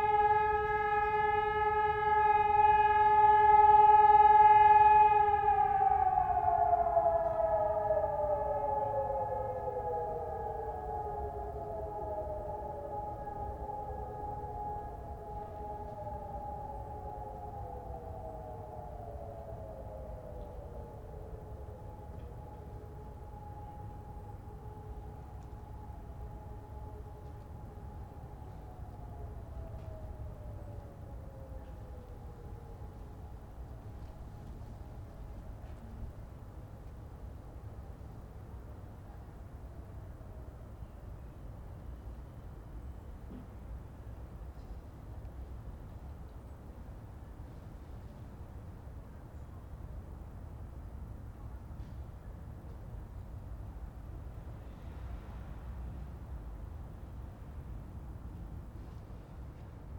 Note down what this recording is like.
sirens testing in Köln, third phase, clear signal, (PCM D50, Primo EM172)